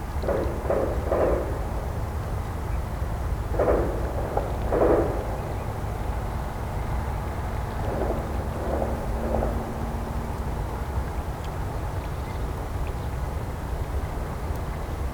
River Warta, Srem - military practice
at the river Warta. Noise of the city and gun shots from military practice area a few kilometers away (roland r-07)